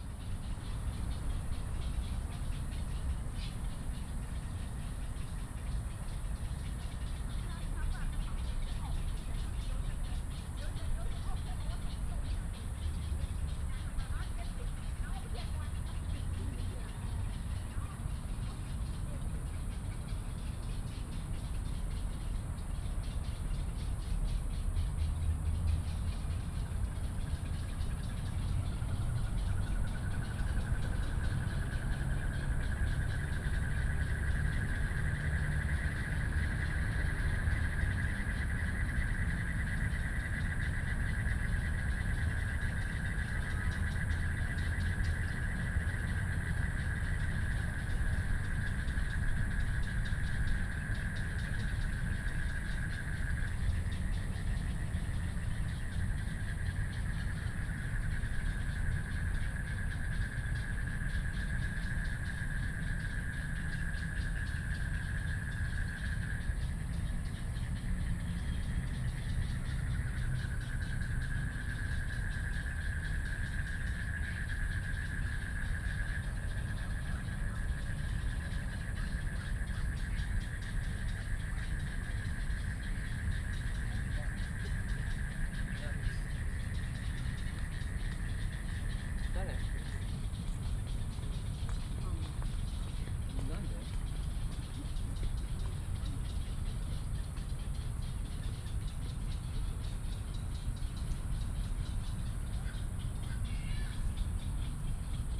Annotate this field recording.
in the Park, Bird calls, Frogs chirping, Traffic noise